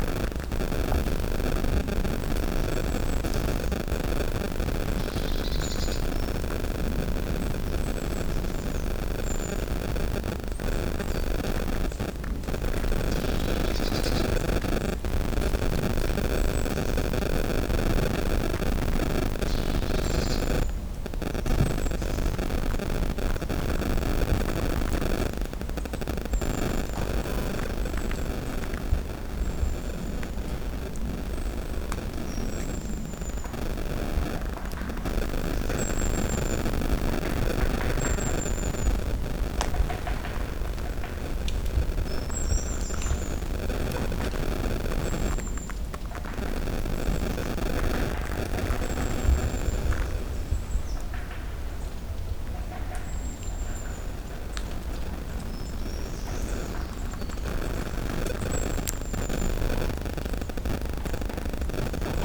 {"title": "Morasko nature reserve - interference", "date": "2018-02-07 14:11:00", "description": "(binaural) in this spot of the forest the recorder picks up interference of unknown source (most likely phone network). moving a few steps towards any direction and it fades out. There are other spots like that in the forest but it doesn't get so strong. For reference my cell phone was turned off so it shouldn't be cause. (sony d50 + luhd PM-01binaural)", "latitude": "52.48", "longitude": "16.90", "altitude": "140", "timezone": "Europe/Warsaw"}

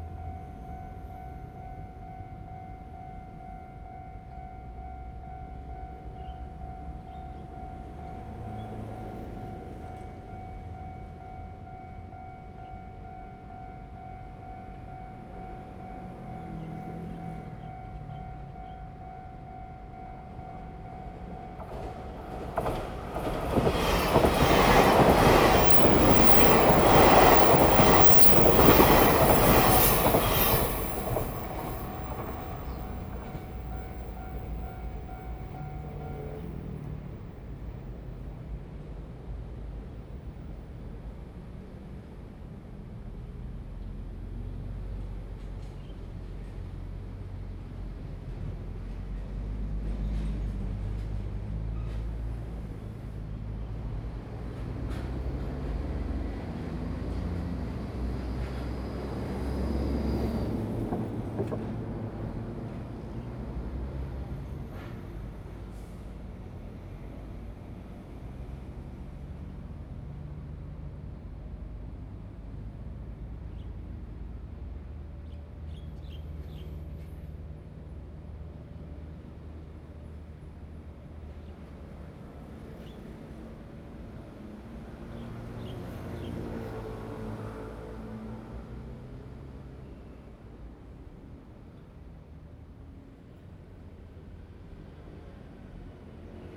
{"title": "中正二路, Yingge Dist., New Taipei City - the train runs through", "date": "2017-08-25 12:53:00", "description": "in the railway, traffic sound, The train runs through\nZoom H2n MS+XY", "latitude": "24.95", "longitude": "121.34", "altitude": "60", "timezone": "Asia/Taipei"}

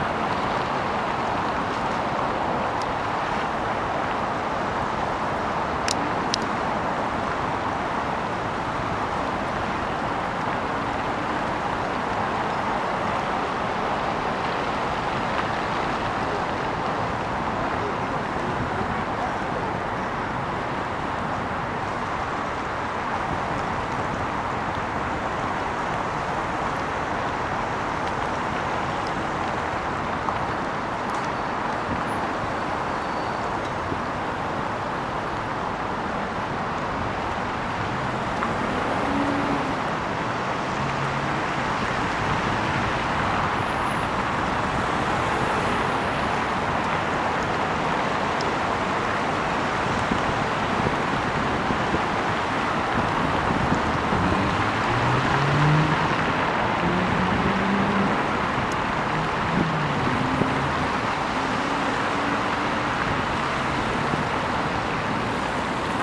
{"title": "Ленинский пр-т., Москва, Россия - Leninsky prospect", "date": "2020-02-04 15:18:00", "description": "You can hear cars driving on wet asphalt, it's snowing. Warm winter. Day.", "latitude": "55.71", "longitude": "37.58", "altitude": "162", "timezone": "Europe/Moscow"}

